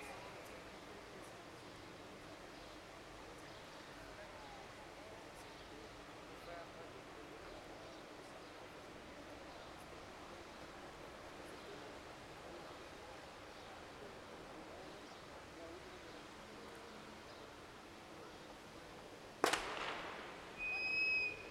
Flag masts singing - Rossio dos Olivais, 1990 Lisboa, Portugal - Flag masts singing
Masts of flags singing iwith a small brise on a hot sunday @ Pavilhão Multi-usos, Oriente, Lisboa. Recorded with a zoom H5 internal mics (XY stereo 90°).